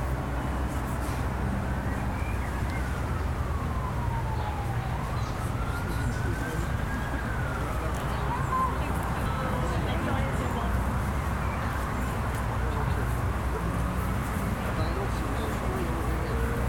Avenue de la Gare, Goussainville, France - Site 4. Le Crould. chemin. 2
Ateliers Parcours commente Ambiances Avec les habitants de Goussainville le Vieux Village. Hyacinthe s'Imagine. Topoï. Alexia Sellaoui Segal, Ingenieur du son
June 2018